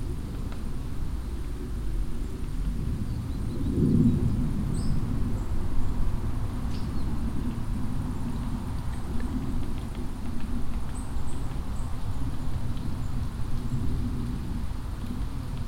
waldberg, forest with woodpecker
Inside the forest. The sound of a woodpecker working on an old trunk and a plane passing the sky
Waldberg, Wald mit Specht
Im Wald. Das Geräusch von einem Specht, der an einem alten Stamm arbeitet, und ein Flugzeug fliegt am Himmel.
Waldberg, forêt avec pivert
En forêt. Le bruit d’un pivert qui cogne contre un vieux tronc d’arbre et un avion qui vole dans le ciel.